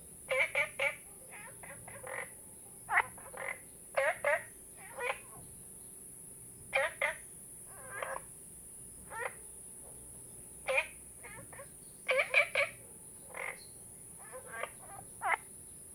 綠屋民宿, 桃米里 Taiwan - Frogs
Frogs chirping, Ecological pool
Zoom H2n MS+XY
Nantou County, Taiwan, 10 June 2015, 12:38